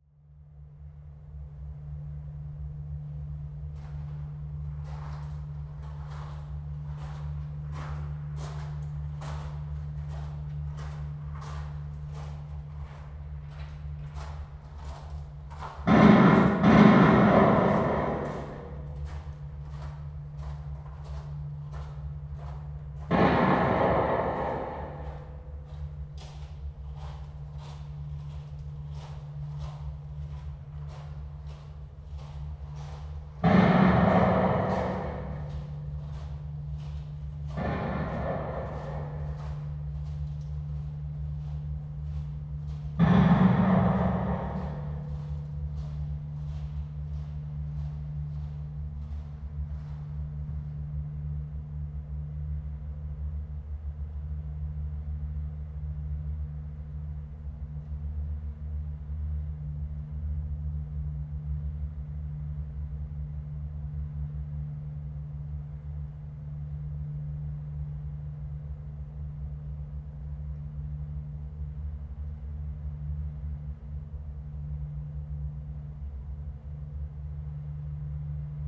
鐵漢堡, Lieyu Township - Underground tunnels
Analog mines, Abandoned military facilities
Zoom H2n MS +XY
福建省, Mainland - Taiwan Border